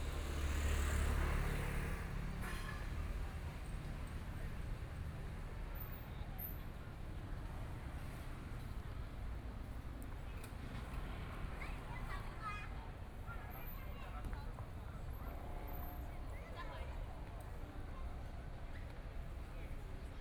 Shuangcheng St., Taipei City - Night in the park
Night in the park, Traffic Sound, Kids game noise, Voice chat among high school students
Please turn up the volume a little.
Binaural recordings, Zoom 4n+ Soundman OKM II
Zhongshan District, Shuāngchéng Street, 5號2樓, 17 February 2014, ~20:00